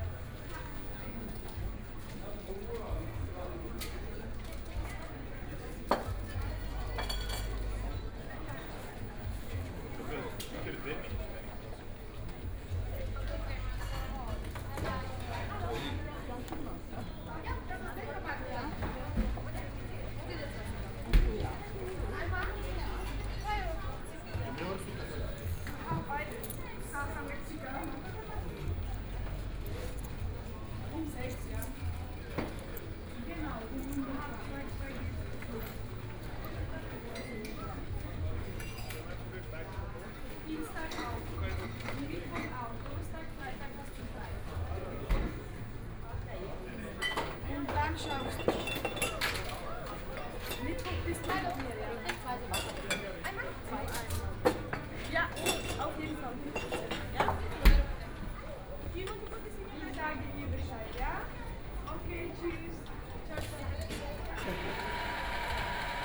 Munich International Airport, 德國 - In the restaurant
Walking in Airport Terminal, In the restaurant
Munich International Airport (MUC), Munich, Germany, 11 May 2014